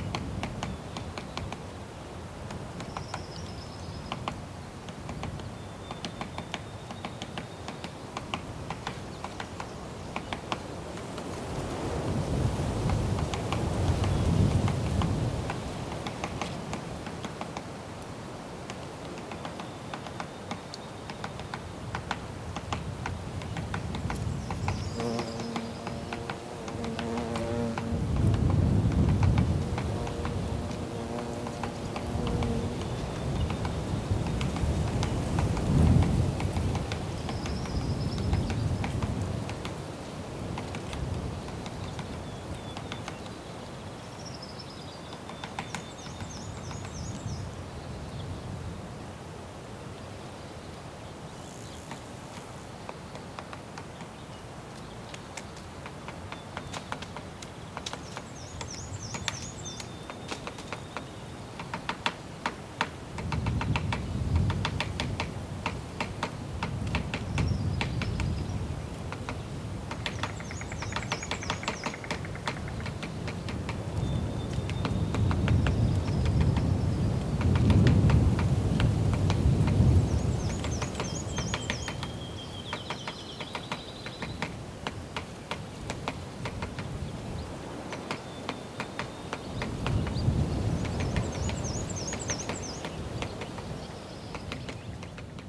Tall Grass Prairie - A woodpecker in the Tall Grass Prairie (Oklahoma)
Some birds, a woodpecker and light wind in the bush. Recorded in the Tall Grass Prairie Reserve, in Oklahoma. Sound recorded by a MS setup Schoeps CCM41+CCM8 Sound Devices 788T recorder with CL8 MS is encoded in STEREO Left-Right recorded in may 2013 in Oklahoma, USA.
OK, USA